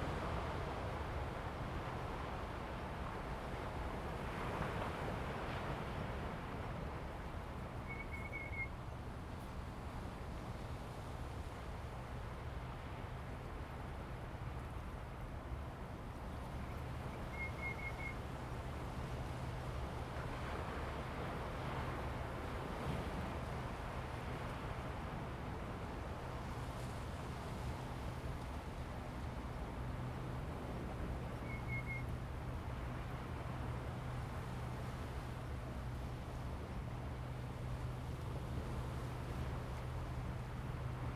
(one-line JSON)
{"title": "Xikou, Tamsui Dist., New Taipei City - Grove", "date": "2016-11-21 15:55:00", "description": "Grove, Bird calls, Sound of the waves\nZoom H2n MS+XY", "latitude": "25.24", "longitude": "121.45", "timezone": "Asia/Taipei"}